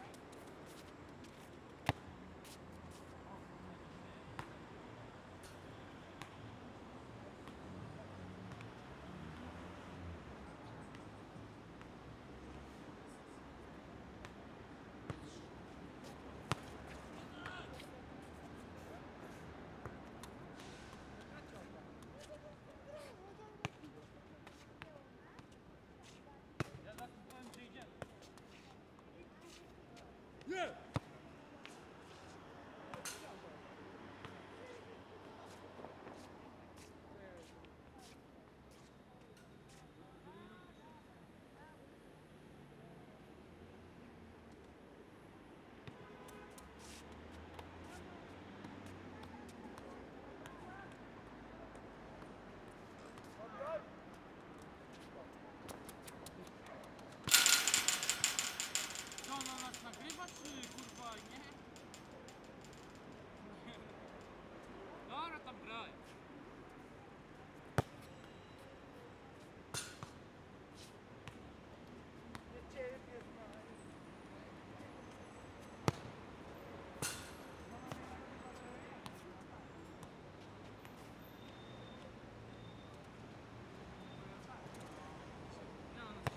Saint-Gilles, Belgium - Game of football
The sound of the boys playing football in the park. Recorded with Audio Technica BP4029 and FOSTEX FR-2LE.
België - Belgique - Belgien, European Union